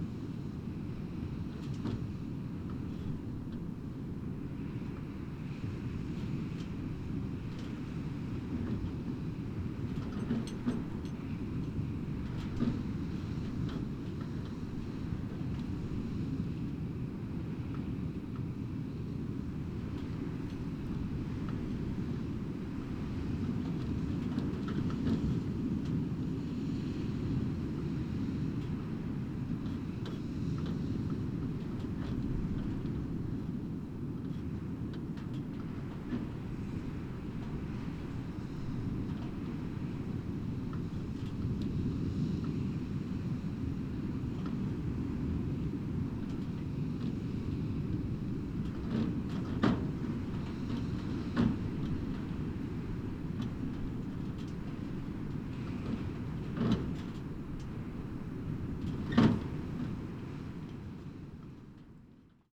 Puerto Percy, Región de Magallanes y de la Antártica Chilena, Chile - storm log - abandoned house
Puerto Percy, abandoned house, loose roof, wind SW 8km/h
Campamento Puerto Percy, build by the oil company ENAP in 1950, abandoned in 2011.
6 March 2019, 11:30